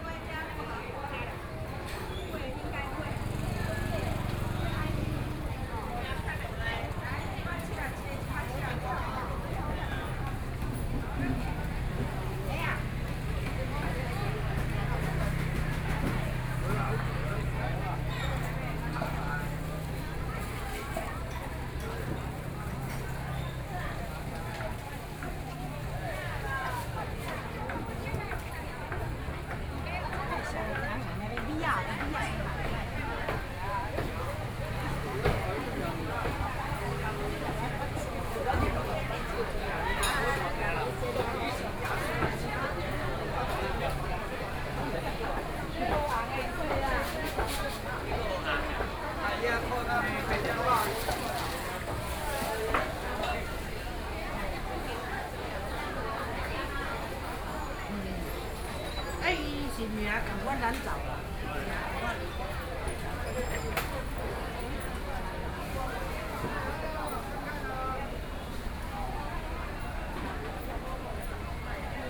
Walking through the traditional market, Construction noise, Traffic Sound
Yancheng District, Kaohsiung City, Taiwan, 16 May